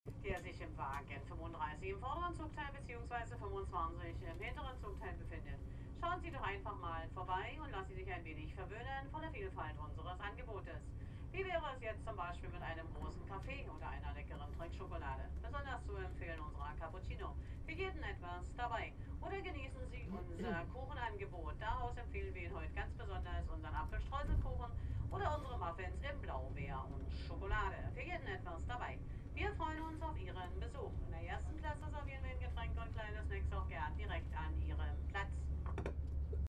Typical German Train: Annoying Catering Announcement
The German public trains seem to make more money with catering services than with their core business - allthough they deliver equially limited quality in both areas. As a result the audience has to listen to epic recitals of the current menu. These "catering announcements" are repeated after each stop and contain unintended comical effects when the staff reads texts monotonously that are meant to be delivered with enthusiasm and accentuation.